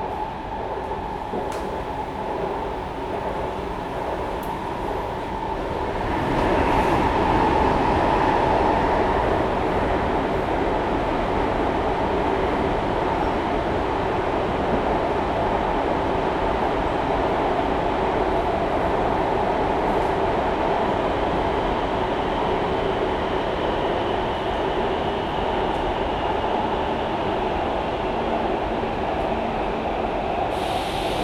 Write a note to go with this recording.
from Houjing Station to Zuoying Station, Sony ECM-MS907, Sony Hi-MD MZ-RH1